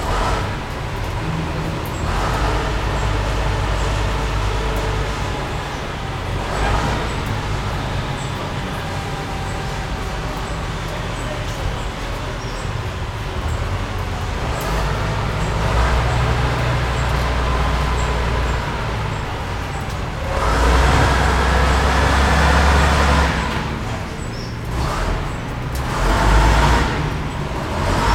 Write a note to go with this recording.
Sound of the center of Amasia, during a 20 minutes lunch break. Bird singing, old cars passing, some people at work.